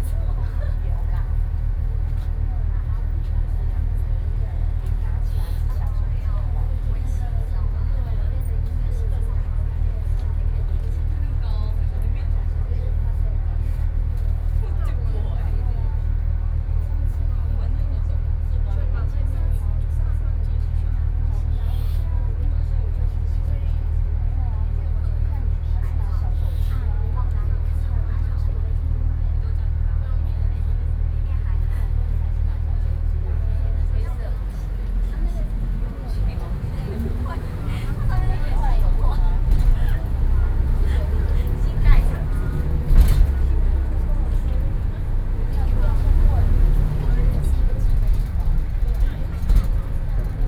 Keelung, Taiwan - Bus
inside the Bus, Sony PCM D50 + Soundman OKM II
24 June, 17:03